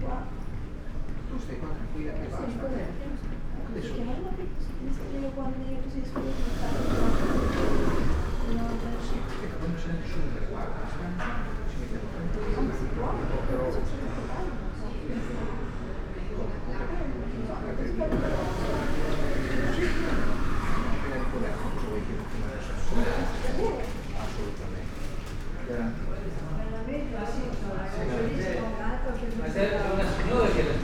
Ospedale di Cattinara, Trieste, Italy - corridor, emergency department
slide gates, spoken words, beds on wheels, steps ...